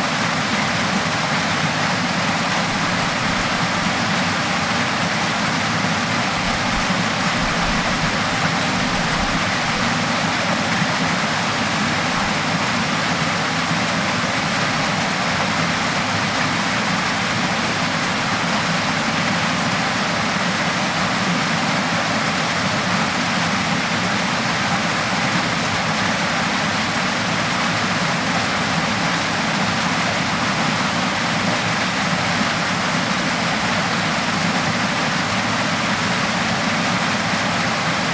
Dovestone Reservoir, Oldham, UK - Flowing water
Zoom H1 - Water flowing down a stone chute into the main reservoir
England, United Kingdom, June 2021